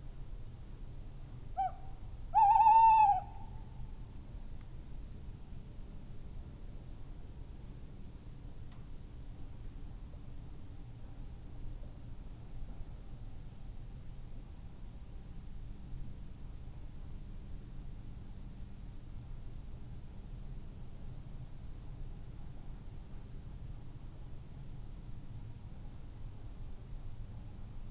tawny owls ... male territorial song ... tremulous hoot call ... SASS ...